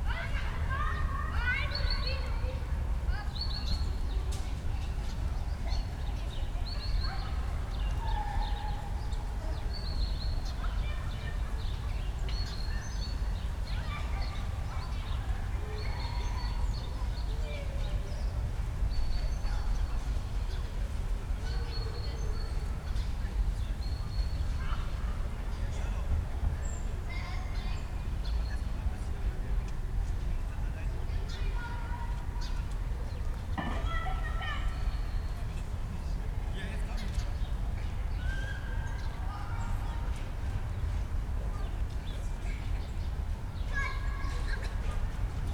place revisited, on a sunny early autumn Sunday, kids playing, early afternoon ambience at Gropiushaus
(Sony PCM D50, DPA4060)
Berlin, Germany, 28 September 2014, ~14:00